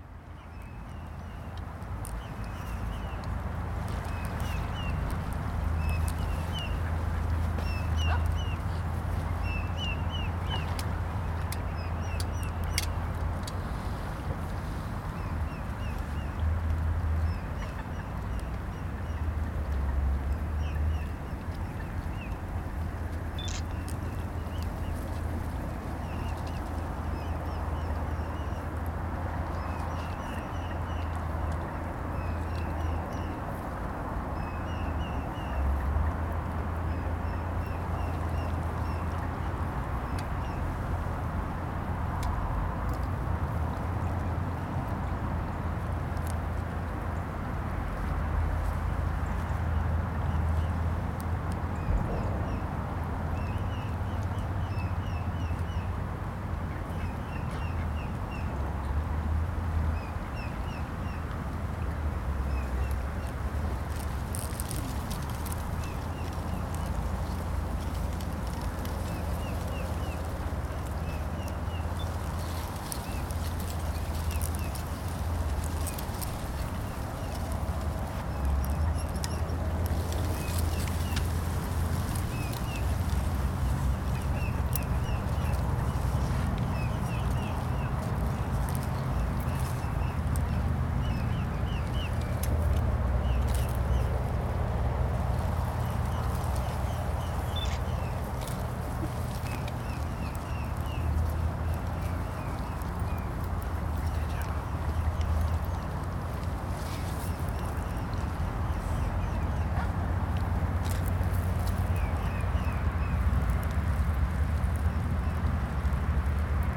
Limerick City, Co. Limerick, Ireland - by the wetlands observation platform
birds, dogs, people, some traffic noise in the background. Aircraft passing.